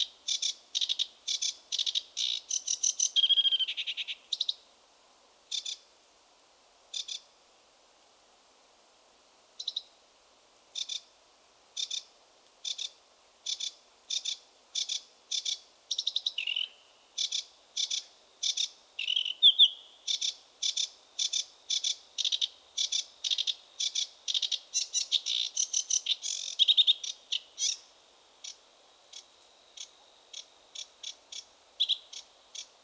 well, actually I went to listen bats...however this sedge warbler song is not less enchanting than signals of echolocating bats